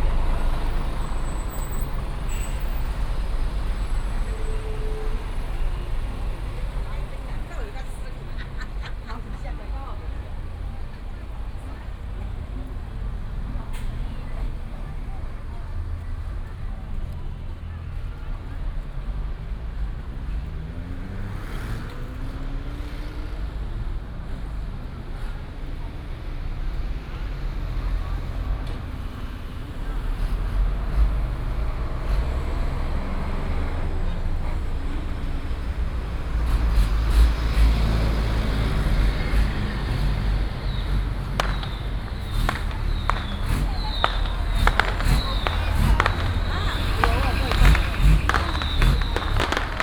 The truck is reversing ready to turn
Miaoli County, Taiwan, 9 March 2017